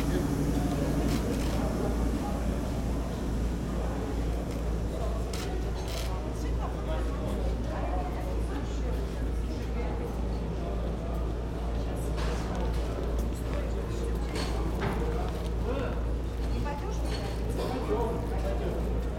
Into the Gyumri station, rain falling. A train is coming from Erevan and is going to Batumi (Georgia) and after, the Gyumri-Erevan train is leaving. Into the Gyumri station, announcements are loud, and the time is very-very long ! Everything is slow. It's a forbidden sound. The station master went 4 times to see me and was aggressive. At the end, I had to leave.